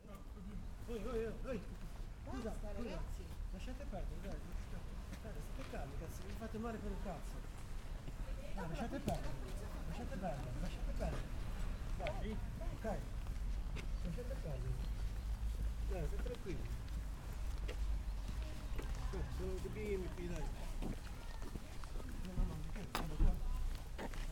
Via Vintler, Bolzano BZ, Italia - 26.10.19 - dopo una rissa al parco Vintola
Parco davanti al Centro Giovani Vintola 18: un uomo interviene a sedare una rissa tra due ragazzi.
registrato Massimo Carozzi
TAA, Italia, 26 October 2019, 14:00